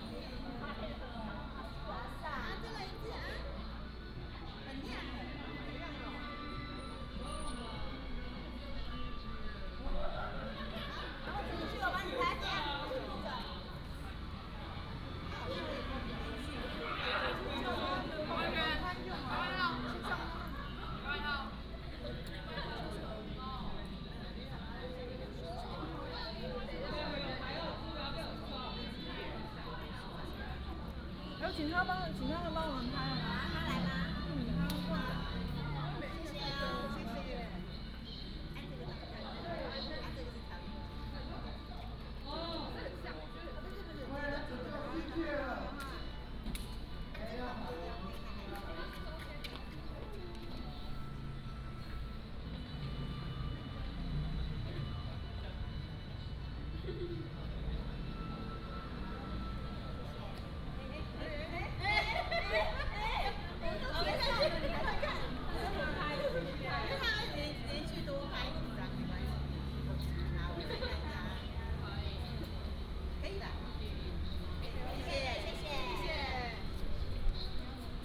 陳氏宗祠, Jincheng Township - In front of the traditional architecture
In front of the traditional architecture, Traffic Sound
福建省, Mainland - Taiwan Border